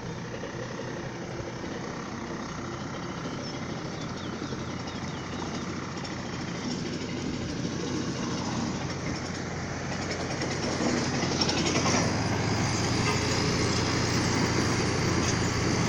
Via Fontesecco, LAquila AQ, Italia - men at work at a construction site
Men and machines at work on a road under the bridge. They're working on the public and private reconstruction after the Earthquake of 2009.